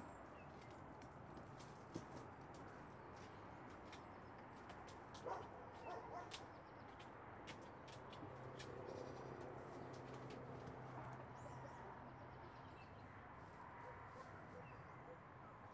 Mountain blvd. Oakland - Daisy and Roy and the chickens - Mountain blvd. Oakland - Daisy and Roy and the chickens
goats Daisy and Roy fighting for their food, chickens also fighting for theirs